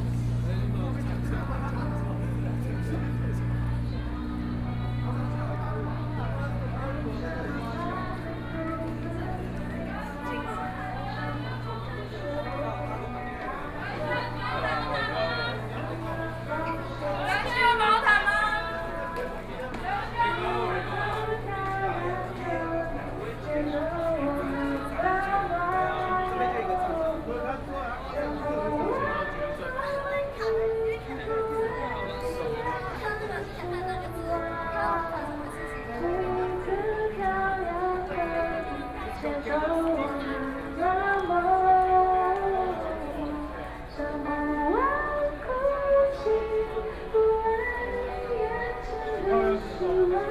Walking through the site in protest, People and students occupied the Legislature
Binaural recordings